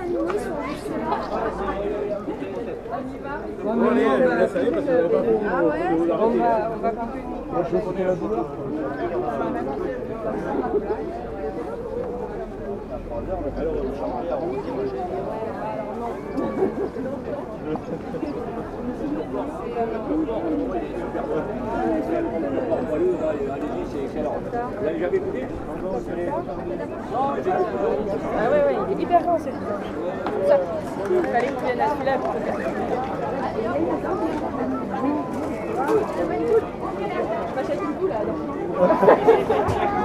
The local market in Ganges. This is a very huge market, people come from far to stroll here.
Ganges, France